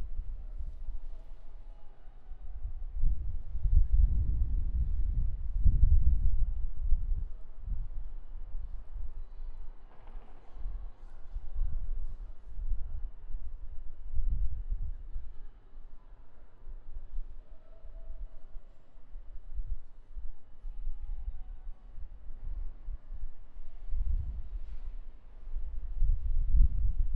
NDSM-Plein, Amsterdam, Nederland - Wasted Sound NDSM Hall
Noord-Holland, Nederland